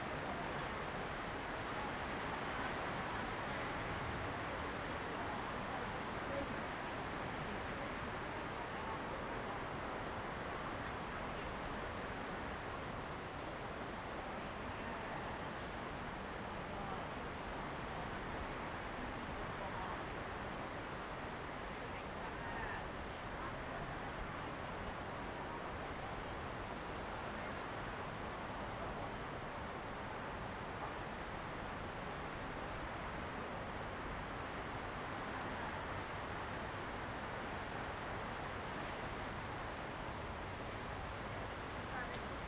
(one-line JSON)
{"title": "古寧頭戰史館, Jinning Township - Forest and Wind", "date": "2014-11-03 08:32:00", "description": "Tourists, Forest and Wind\nZoom H2n MS+XY", "latitude": "24.48", "longitude": "118.32", "altitude": "15", "timezone": "Asia/Taipei"}